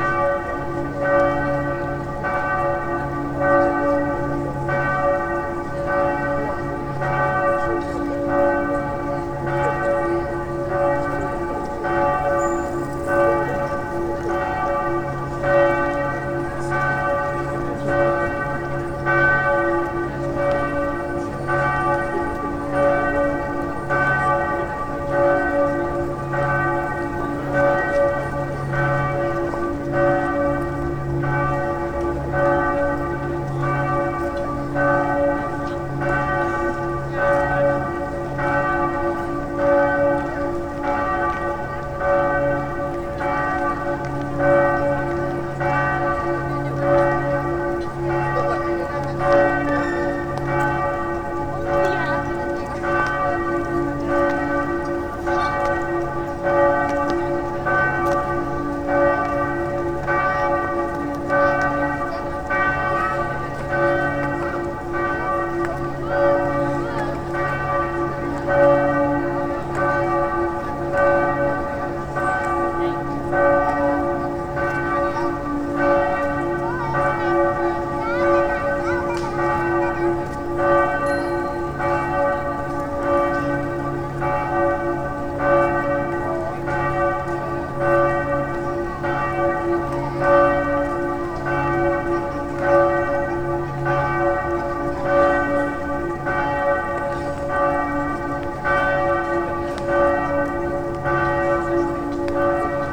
Münsterpl., Bonn, Deutschland - Münster Bonn bells
It is a recording from the six o'clock ringing of the bells in Bonn Cathedral. You can hear how the whole place vibrates and resonates.
Nordrhein-Westfalen, Deutschland, 23 August 2010